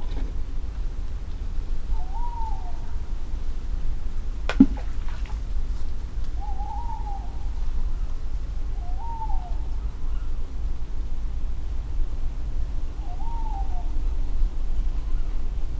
Pergola, Malvern, UK - Owl Apple Ducks
Early morning after a breezy night owls call, apples fall, ducks arrive and leave and I come to recover the recorder.